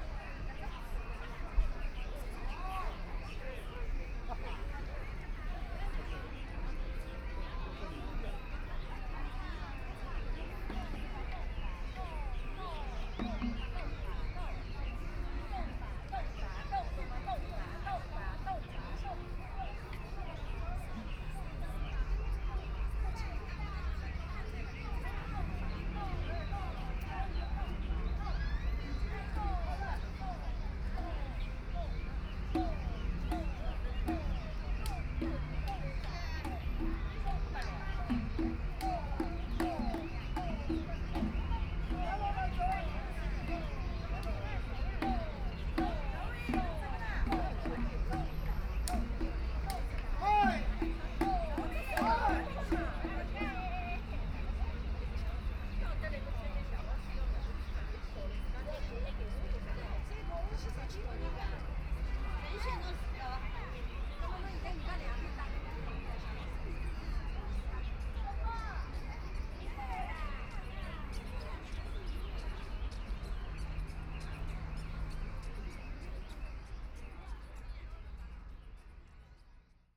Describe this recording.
Walking into the park entrance, Binaural recording, Zoom H6+ Soundman OKM II